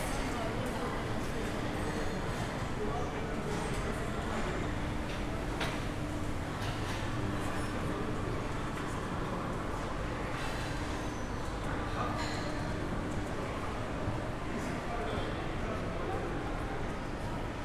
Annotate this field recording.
a short walk through the usual shopping center madness. the narratives of these places is almost the same everwhere, and so are the sounds. (SD702 DPA4060)